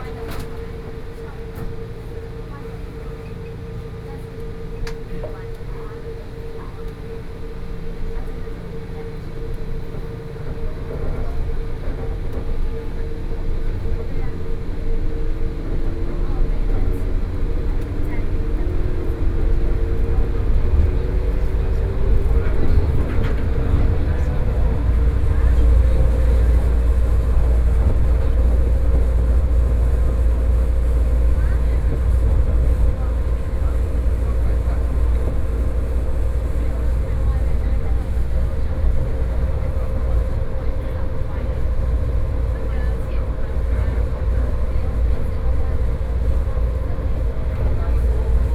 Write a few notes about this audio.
Brown Line (Taipei Metro)from Zhongxiao Fuxing Station to Songshan Airport Station, Sony PCM D50 + Soundman OKM II